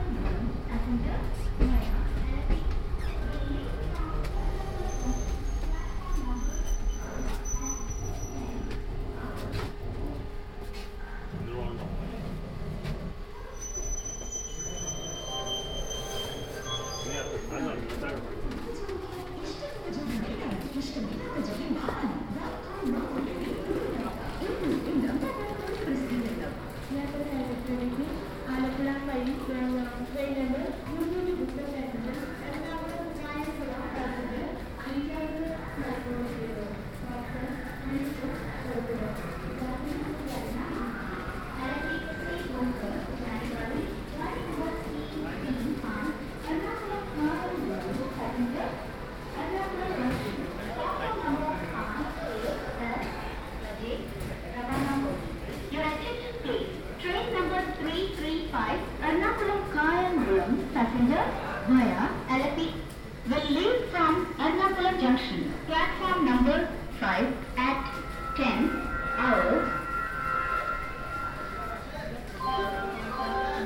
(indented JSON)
{
  "title": "Eranakulam, Eranakulam town, arrival",
  "date": "2009-11-09 10:07:00",
  "description": "India, Kerala, Eranakulam, train, railway station",
  "latitude": "9.99",
  "longitude": "76.29",
  "altitude": "7",
  "timezone": "Asia/Kolkata"
}